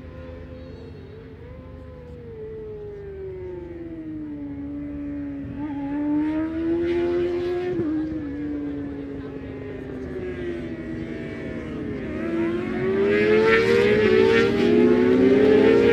Unit 3 Within Snetterton Circuit, W Harling Rd, Norwich, United Kingdom - british superbikes 2005 ... supersports qualifying ...
british superbikes ... supersports 600s qualifying ... one point stereo mic to minidisk ... time appproximate ...